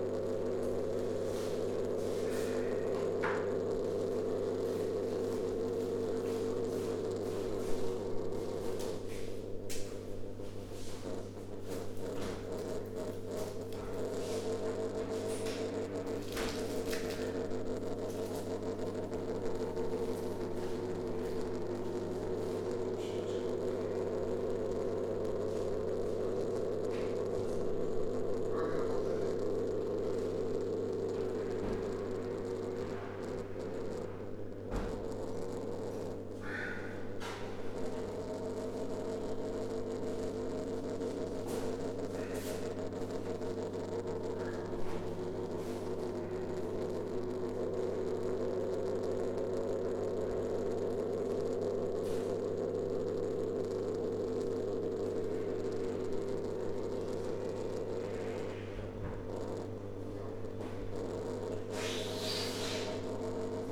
Srem, at Kosmos club - disco ball
an old, dusty glitter ball spinning and wheezing.